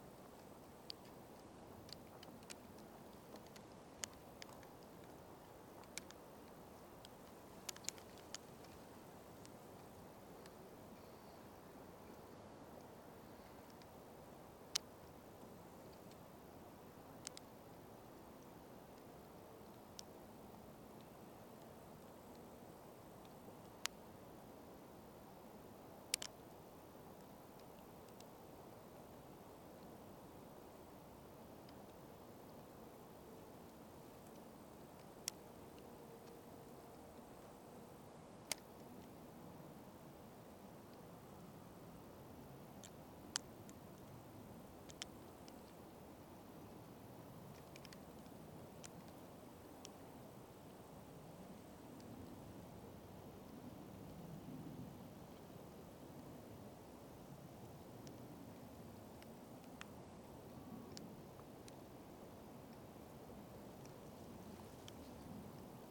{"title": "Unnamed Road, Тульская обл., Россия - In the fields near the Oka river", "date": "2020-09-13 12:53:00", "description": "In the fields near the Oka river. You can hear the rustle of insects and meadow grasses.", "latitude": "54.81", "longitude": "37.25", "altitude": "114", "timezone": "Europe/Moscow"}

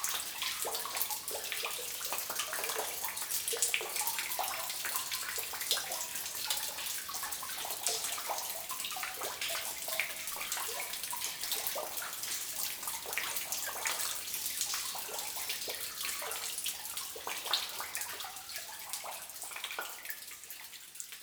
{"title": "Herbeumont, Belgique - Slate quarry", "date": "2018-06-17 09:00:00", "description": "Entrance of a flooded underground slate quarry, with drops falling into a lake, and distant sounds of the birds.", "latitude": "49.80", "longitude": "5.22", "altitude": "266", "timezone": "Europe/Brussels"}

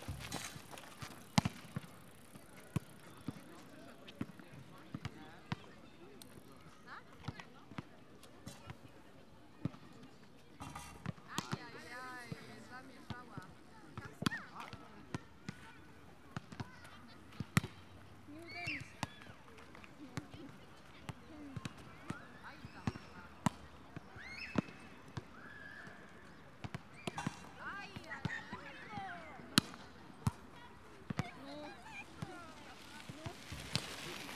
{"title": "Poznan, Strzeszyn, Strzeszynskie Lake, sports court area - basketball + volleyball", "date": "2013-05-04 12:32:00", "description": "a family to the right plays HORSE, a couple knocking away a volleyball, bikers coming along the path, crowd enjoys first warm, spring day at the lake.", "latitude": "52.46", "longitude": "16.83", "altitude": "79", "timezone": "Europe/Warsaw"}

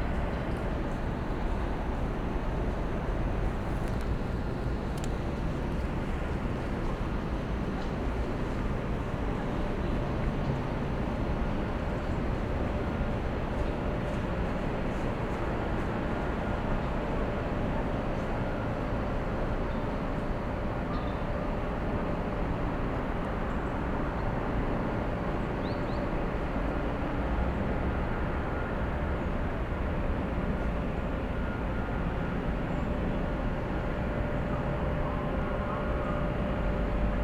{"title": "Ljubljana Castle - city soundscape at 11am", "date": "2012-11-06 11:00:00", "description": "city heard from Ljubljana castle at 11am: chuchbells, trains, cars...\n(Sony PCM D50, DPA4060)", "latitude": "46.05", "longitude": "14.51", "altitude": "349", "timezone": "Europe/Ljubljana"}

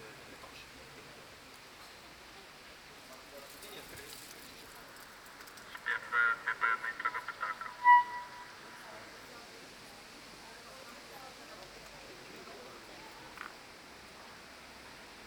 {"title": "Główna, Sokołowsko, Poland - Weiss Weisslich 11e by Peter Ablinger", "date": "2019-08-18 12:16:00", "description": "Weiss/Weisslisch 11e, performance Peter Ablinger", "latitude": "50.69", "longitude": "16.23", "altitude": "569", "timezone": "Europe/Warsaw"}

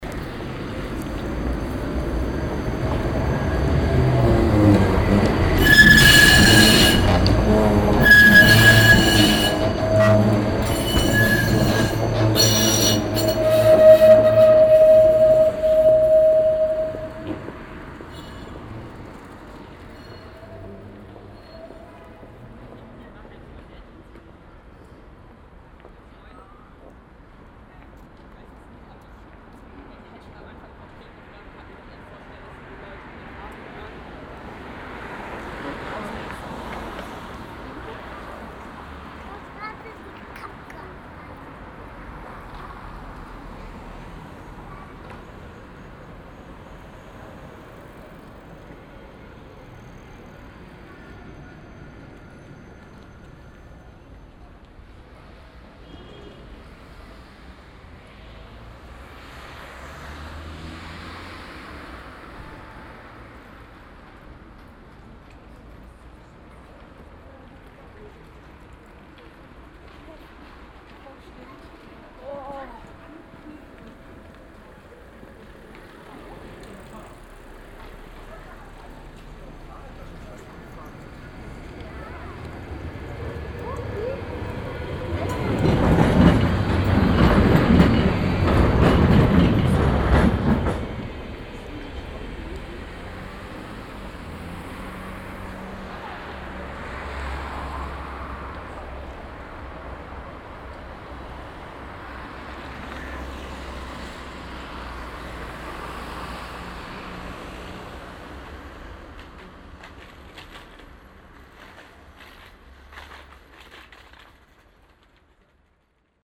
{"title": "Berlin, Kastanienallee, tram station - berlin, kastanienallee, tram station", "date": "2012-02-06 16:20:00", "description": "At a tram station. the sound of a tram stopping and driving on in a curve. A second tram passing by from the opposite side. Parallel the sound of other car traffic.\nsoundmap d - social ambiences and topographic field recordings", "latitude": "52.53", "longitude": "13.41", "altitude": "58", "timezone": "Europe/Berlin"}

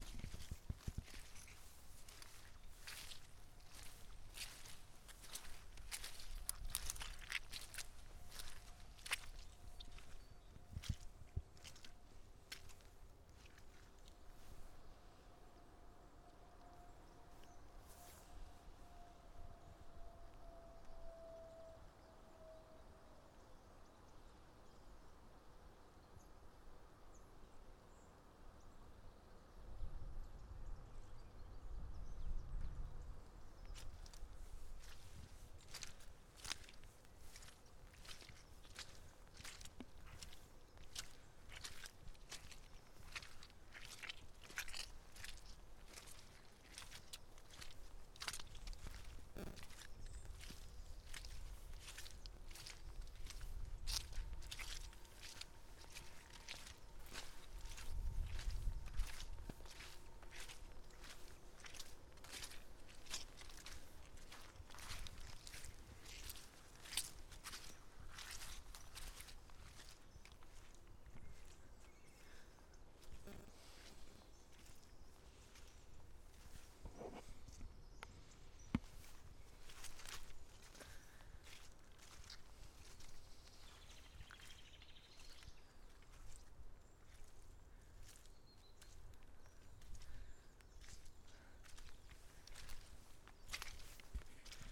{"title": "Sportovní, Ústí nad Labem-Neštěmice, Česko - Walking in the mud", "date": "2022-02-06 13:59:00", "description": "Walking in the mud, rainy winter afternoon.", "latitude": "50.67", "longitude": "14.10", "altitude": "199", "timezone": "Europe/Prague"}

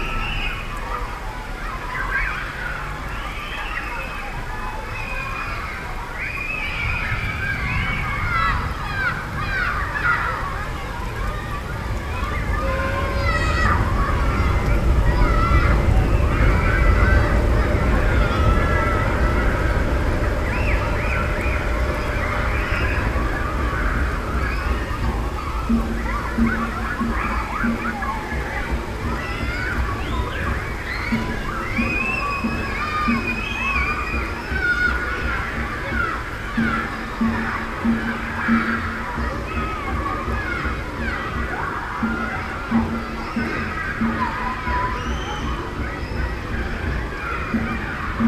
{"title": "Poplar, London, UK - Summer storm, urban scape", "date": "2016-07-03 16:50:00", "description": "Recording captured in the Summer of 2016. Thunderstorm, rain, a nearby children's playground and and overground rail line.", "latitude": "51.51", "longitude": "-0.03", "altitude": "7", "timezone": "Europe/London"}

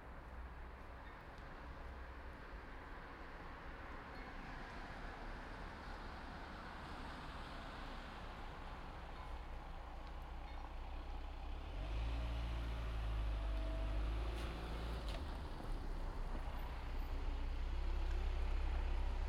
{"title": "Eckernförder Str., Kiel, Deutschland - Level crossing", "date": "2017-09-13 01:00:00", "description": "Level crossing at night with a crossing train: warning bells and lowering gates, train passes by, gates open, sporadic traffic on the street, flag poles clattering in the wind, some collected rain drops falling on the leaves of a tree. Binaural recording, Zoom F4 recorder, Soundman OKM II Klassik microphone", "latitude": "54.35", "longitude": "10.09", "altitude": "20", "timezone": "Europe/Berlin"}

